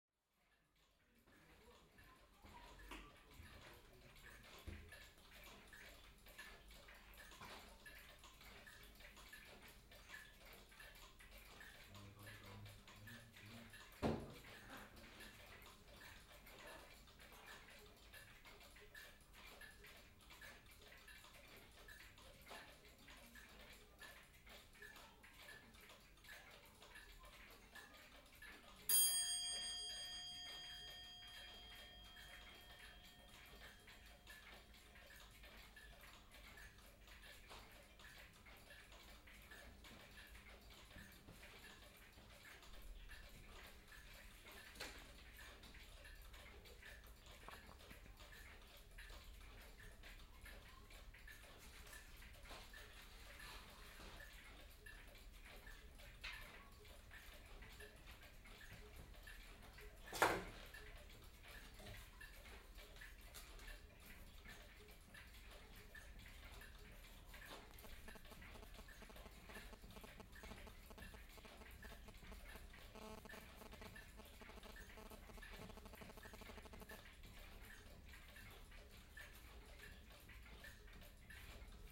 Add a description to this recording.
Mr. Wunderlich has quite a small shop with a lot of clocks, all ticking. Three o'clock is anounced several times. Binaural recording.